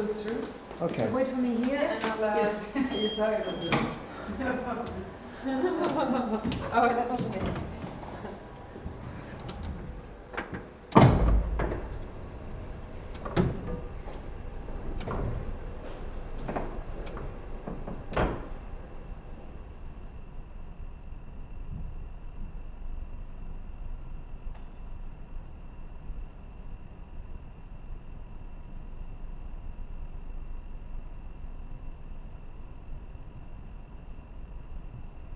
International Criminal Tribunal for the Former Yugoslavia Den Haag, Netherlands - ICTY Ambience
Ambience of a corridor and one of the courtrooms at the ICTY, Den Haag